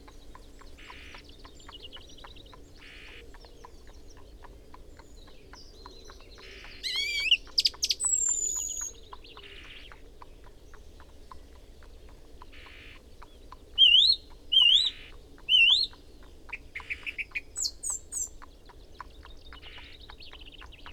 {"title": "Luttons, UK - Singing song thrush ... horse and rider approaching ...", "date": "2017-06-26 07:12:00", "description": "Song thrush singing ... horse and rider approaching ... parabolic ... background noise ... song and calls from whitethroat ... wren ... chaffinch ...", "latitude": "54.13", "longitude": "-0.54", "altitude": "80", "timezone": "Europe/London"}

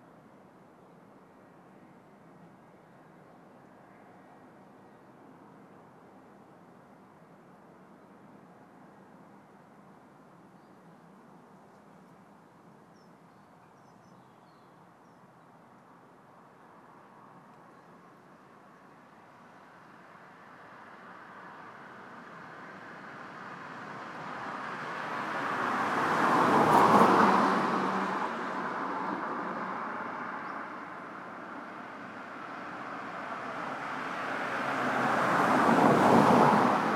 {"title": "Moss Lane", "date": "2010-09-30 16:17:00", "description": "Cars, dog, birds", "latitude": "53.36", "longitude": "-2.25", "altitude": "82", "timezone": "Europe/London"}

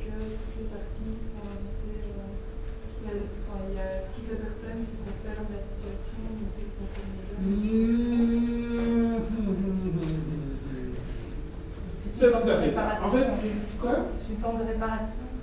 {"title": "Centre, Ottignies-Louvain-la-Neuve, Belgique - A course of social matters", "date": "2016-03-11 15:20:00", "description": "A course of social matters, in the big Agora auditoire.", "latitude": "50.67", "longitude": "4.61", "altitude": "117", "timezone": "Europe/Brussels"}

